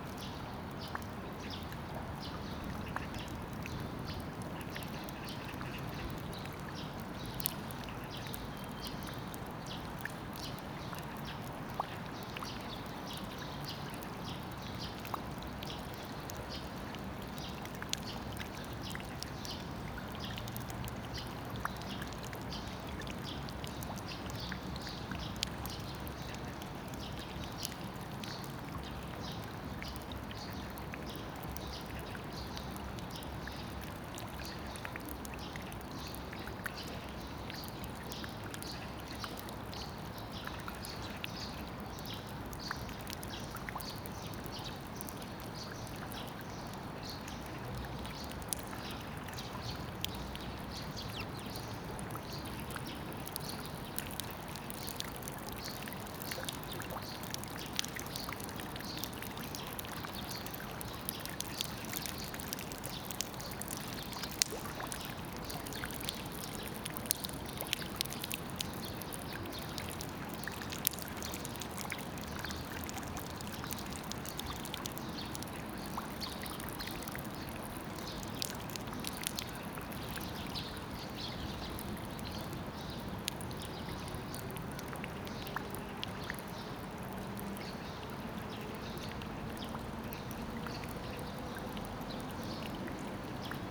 대한민국 서울특별시 서초구 양재동 126-1 :Yangjaecheon, Summer, Underpass Sewage - Yangjaecheon, Summer, Underpass Sewage
A recording at Yangjaecheon stream underpass.
birds chirping, rain gutter sound
여름 비온뒤 양재천 굴다리, 새소리, 빗물받이